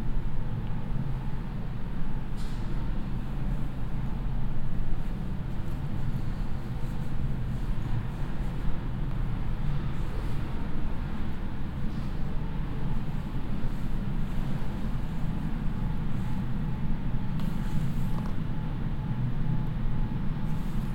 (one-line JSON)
{"title": "essen, forum for art and architecture, ventilation - essen, forum for art and architecture, ventilation", "date": "2011-06-09 23:11:00", "description": "Walking down the stairway into the basement of the exhibition place - the humming of the ventilation.\nProjekt - Klangpromenade Essen - topographic field recordings and social ambiences", "latitude": "51.46", "longitude": "7.01", "altitude": "81", "timezone": "Europe/Berlin"}